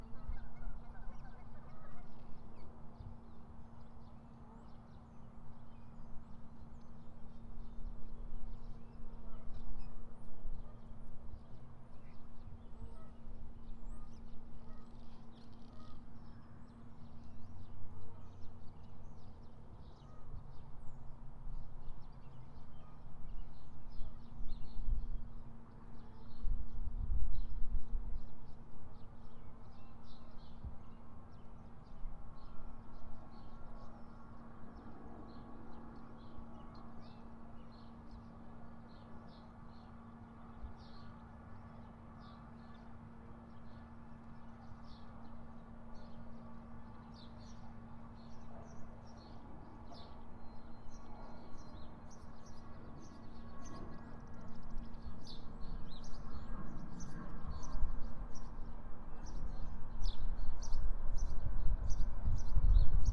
The Park Lodge at Terry Trueblood Recreation Area, McCollister Blvd, Iowa City, IA, USA - The Park Lodge
This recording was taken at the Park Lodge at the Terry Trueblood Recreation Area. Like most areas around the trail, the majority of what you'll hear at the lodge will be birds with sounds in the background like traffic and currently construction. This was recorded with a Tascam DR-100MKIII.
29 April 2019